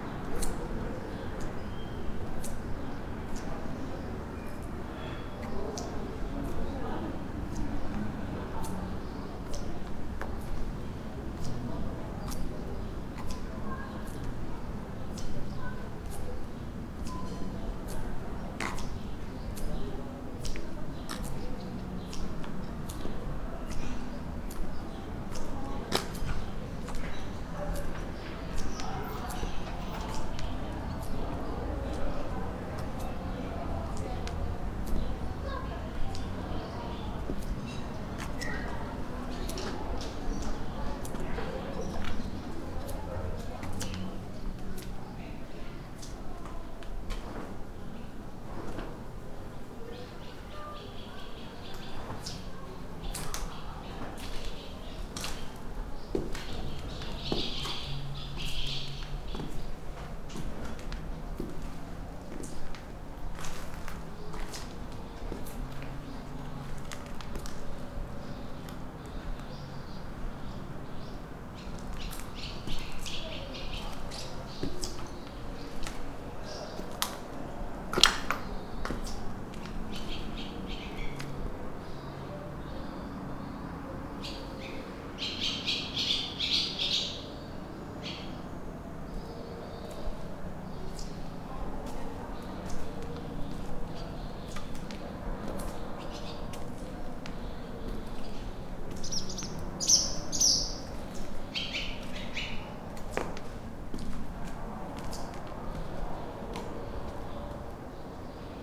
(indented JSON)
{
  "title": "Ptuj, Slovenia - with clogs, up and down the street",
  "date": "2014-07-29 19:35:00",
  "description": "street and yard ambience, walk, clogs, birds ...",
  "latitude": "46.42",
  "longitude": "15.87",
  "altitude": "228",
  "timezone": "Europe/Ljubljana"
}